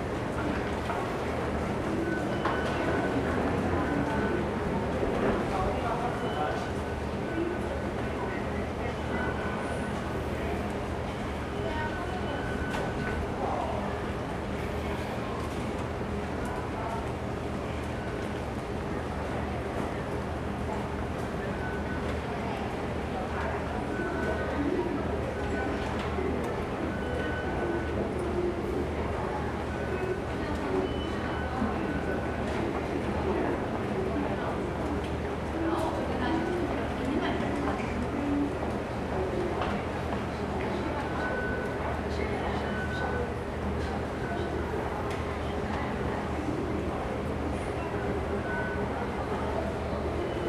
walking into the MRT Station
Sony Hi-MD MZ-RH1 +Sony ECM-MS907
New Taipei City, Taiwan, January 2012